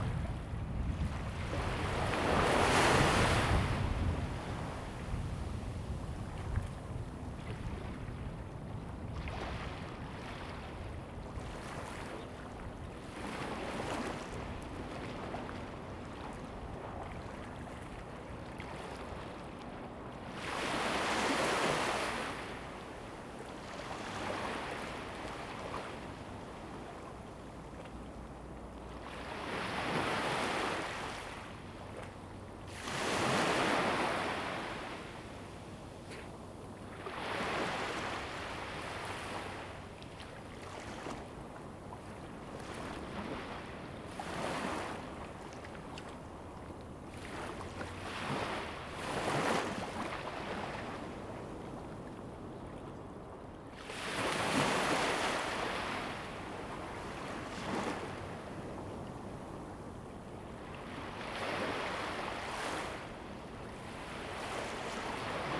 烏石鼻漁港, Taiwan - Small fishing port
Thunder and waves, Sound of the waves, Small fishing port, Tourists
Zoom H2n MS+XY